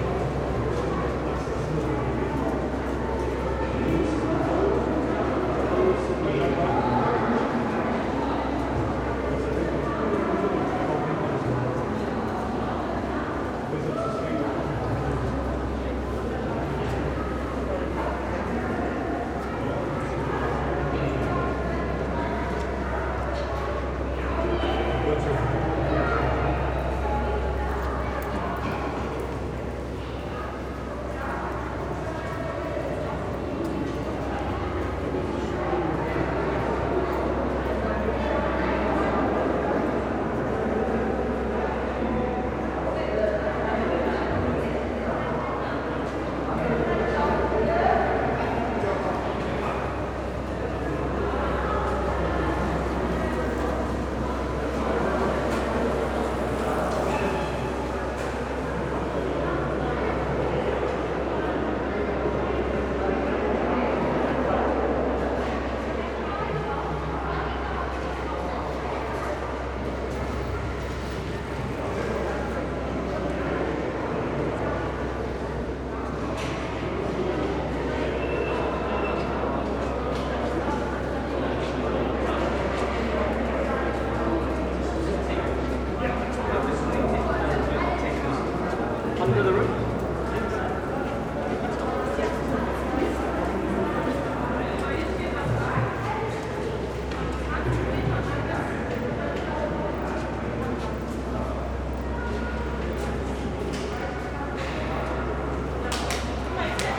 Hamburg, St.Pauli ALter Elbtunnel, a short walk downstairs, a few steps into the tunnel, then back and up with one of the larger elevators
(Sony PCM D50, Primo EM272)
21 April 2022, ~17:00